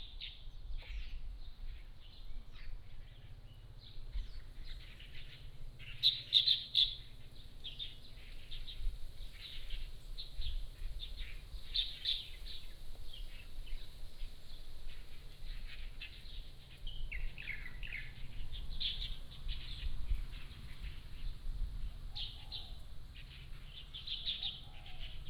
馬祖村, Nangan Township - Birds singing
Birds singing, Small village, Next to the church
15 October 2014, ~09:00